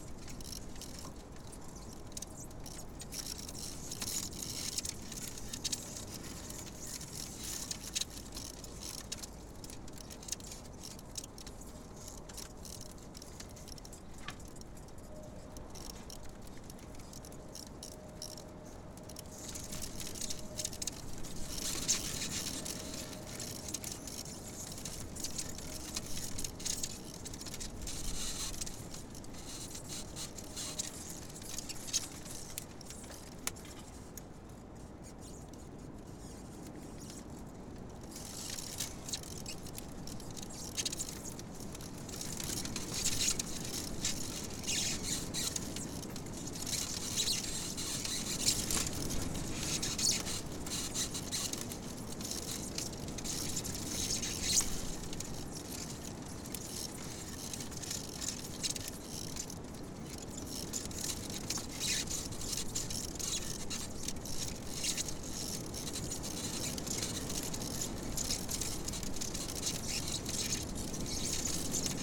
Vyzuoneles, Lithuania, broken tiny ice
Floded meadow. Stormy day. Tiny ice is broken by moving branches of trees...
Utenos apskritis, Lietuva